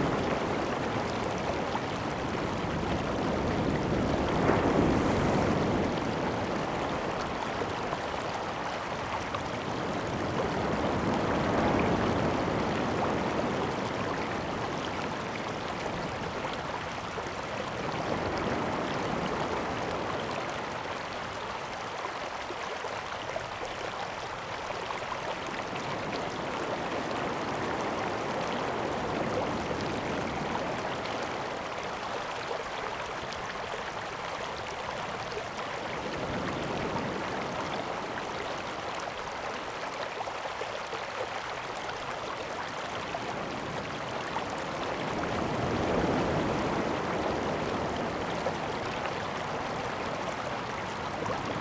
建農里, Taitung City - Streams and waves
Streams and waves, The weather is very hot
Zoom H2n MS +XY
Taitung County, Taitung City, 大南二號堤防